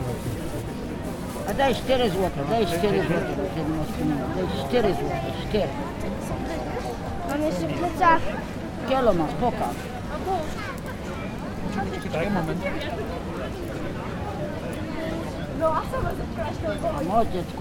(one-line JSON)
{"title": "Krupówki Zakopane", "date": "2011-07-18 15:11:00", "description": "oscypki street seller", "latitude": "49.29", "longitude": "19.96", "altitude": "846", "timezone": "Europe/Warsaw"}